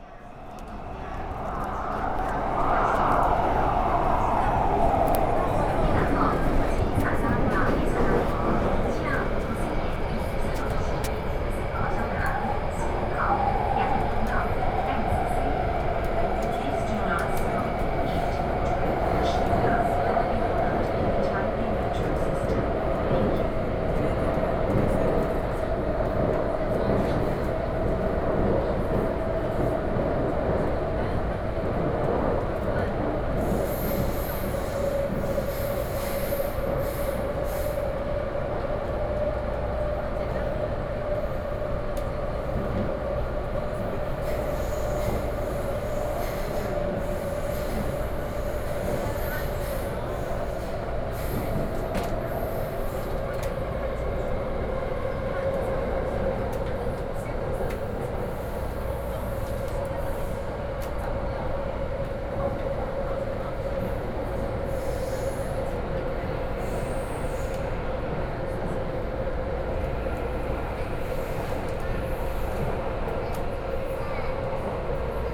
{"title": "Taipei, Taiwan - MRT trains", "date": "2013-06-22 13:33:00", "description": "inside the MRT train, from Guting to Dingxi, Sony PCM D50 + Soundman OKM II", "latitude": "25.02", "longitude": "121.52", "altitude": "9", "timezone": "Asia/Taipei"}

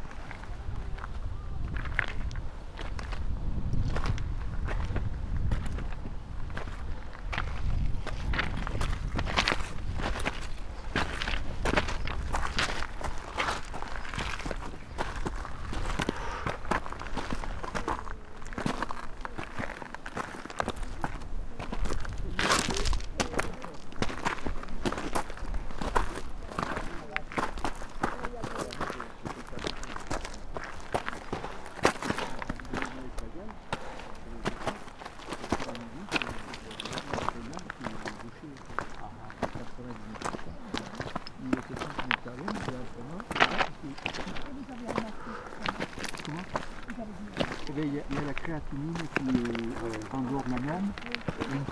{"date": "2010-06-30 12:23:00", "description": "Refuge de Peclet-Polset 2474 m, French Alps.\nWalking in the snow.\nMarche dnas la neige.\nTech Note : Sony PCM-D50 internal microphones, wide position.", "latitude": "45.29", "longitude": "6.66", "altitude": "2457", "timezone": "Europe/Berlin"}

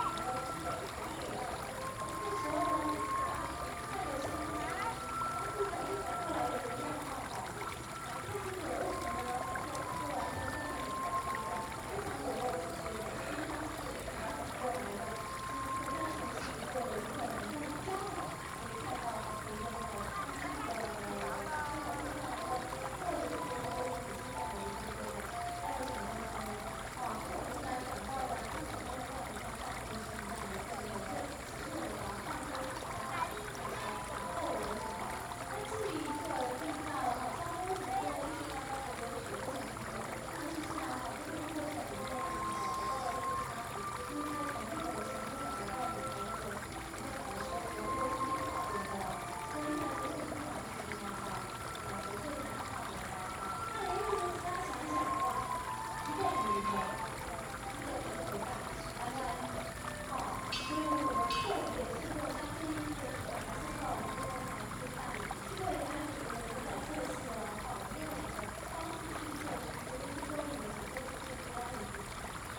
{"title": "Paper Dome, 桃米里 Nantou County - sound of streams", "date": "2015-08-11 17:25:00", "description": "sound of water streams, Bell hit, A small village in the evening\nZoom H2n MS+XY", "latitude": "23.94", "longitude": "120.93", "altitude": "479", "timezone": "Asia/Taipei"}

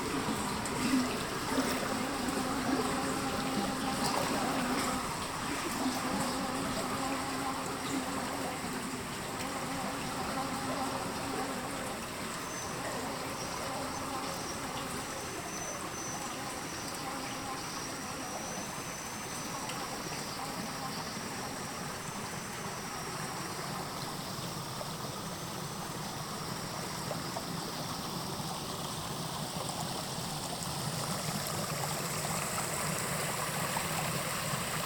{"title": "KODAMA tunnel session - in a drainage tunnel below the road north of La Pommerie", "date": "2009-10-20 12:55:00", "latitude": "45.68", "longitude": "2.14", "altitude": "768", "timezone": "Europe/Berlin"}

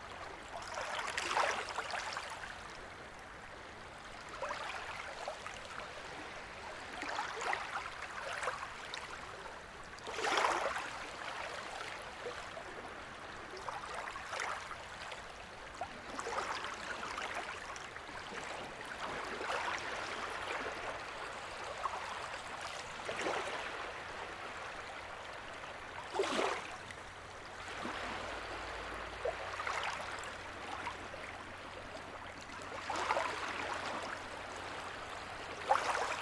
{"title": "waves of Nida, lagoon soft waves #2", "date": "2011-11-12 14:40:00", "description": "waves of Nida water sounds", "latitude": "55.34", "longitude": "21.05", "altitude": "1", "timezone": "Europe/Vilnius"}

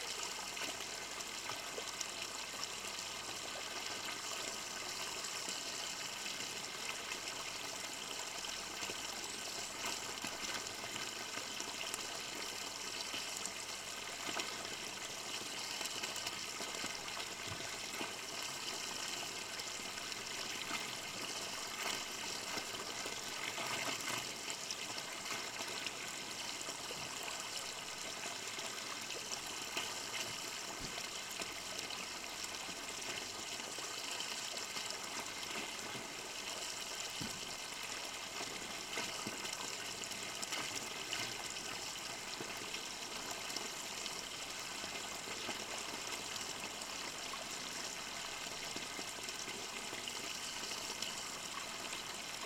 {"title": "Paliesius Manor, Lithuania, the fountain", "date": "2018-08-28 14:40:00", "latitude": "55.25", "longitude": "26.48", "altitude": "141", "timezone": "GMT+1"}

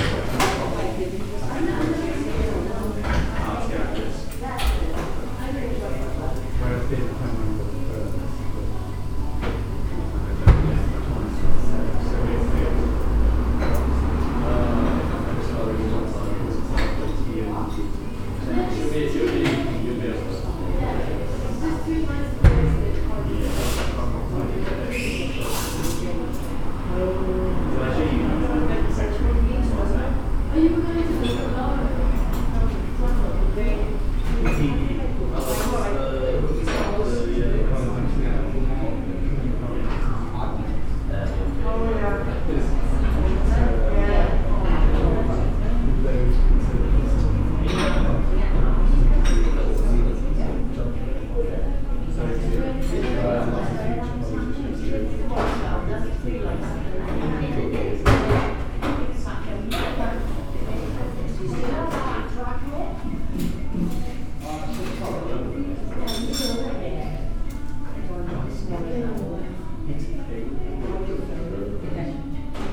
{"title": "Cafe Voices, Great Malvern, Worcestershire, UK - Cafe", "date": "2019-07-04 10:56:00", "description": "The sounds of a pleasant cafe.\nMixPre 3 with 2 x Rode NT5s in a rucksack.", "latitude": "52.11", "longitude": "-2.33", "altitude": "143", "timezone": "Europe/London"}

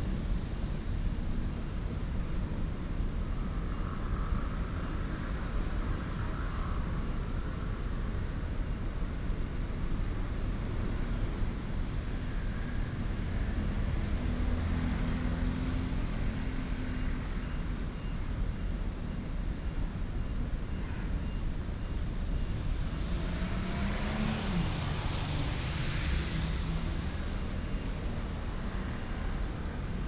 {"title": "田寮河, Ren’ai Dist., Keelung City - Sitting in the river", "date": "2016-07-18 14:29:00", "description": "Sitting in the river, Traffic Sound, Thunderstorms", "latitude": "25.13", "longitude": "121.75", "altitude": "10", "timezone": "Asia/Taipei"}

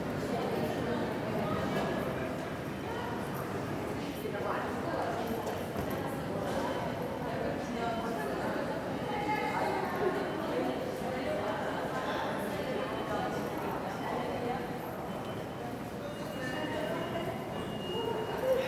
{"title": "stansted airport, baggage claim - london stansted, strolling", "description": "recorded july 18, 2008.", "latitude": "51.89", "longitude": "0.26", "altitude": "104", "timezone": "GMT+1"}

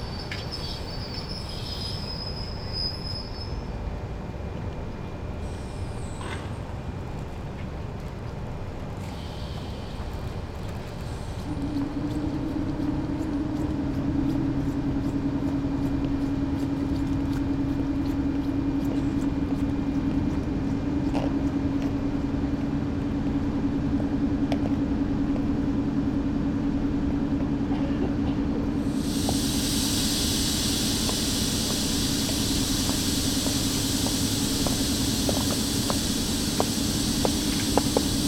{"title": "St. Petersburg, Russia - Finlyandsky railway station in St. Petersburg", "date": "2015-05-10 20:00:00", "description": "I often go to this station. I like the sound of the station, they for me as a song.\nRecored with a Zoom H2.", "latitude": "59.96", "longitude": "30.36", "altitude": "13", "timezone": "Europe/Moscow"}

